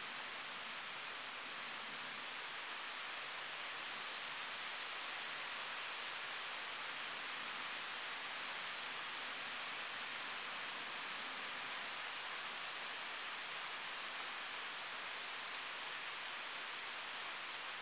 Jordan Rd, Buena Vista, VA, USA - Wind Over Jordan Road
Jordan Road is a gated Forest Service Road in the George Washington National Forest. Recorded half a mile or so past the western gate, on the southern slope just below the road. Tascam DR-05; Manfrotto tabletop tripod; Rycote windscreen. Mics angled upward to catch the rustle of the wind in the canopy. Percussive sound is hickory nuts dropping in the wind.